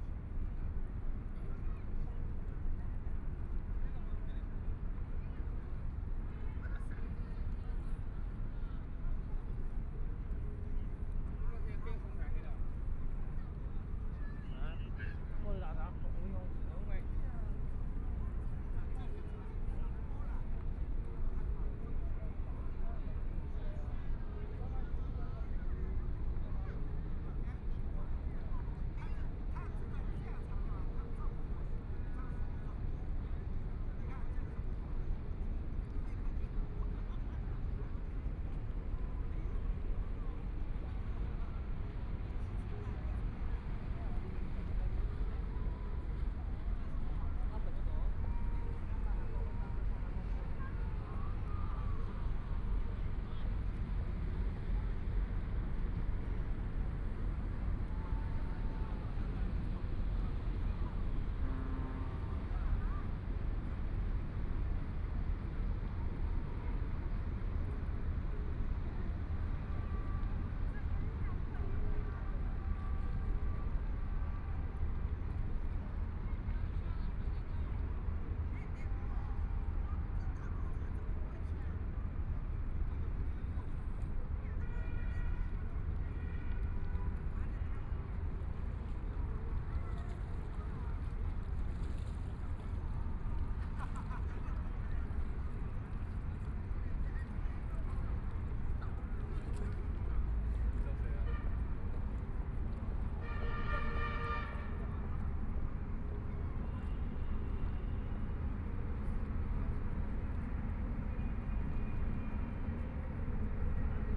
the Bund, Shanghai - Stood by the river
sound of the Boat traveling through, Many tourists, In the back of the clock tower chimes, Binaural recordings, Zoom H6+ Soundman OKM II
Huangpu, Shanghai, China, 2 December 2013